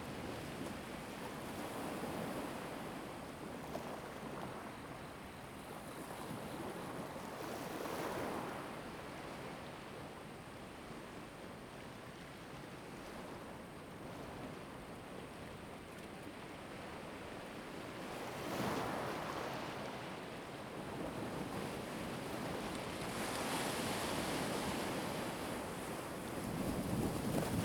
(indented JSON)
{
  "title": "Jiayo, Koto island - sound of the waves",
  "date": "2014-10-28 20:49:00",
  "description": "At the beach, sound of the waves\nZoom H2n MS +XY",
  "latitude": "22.06",
  "longitude": "121.51",
  "altitude": "6",
  "timezone": "Asia/Taipei"
}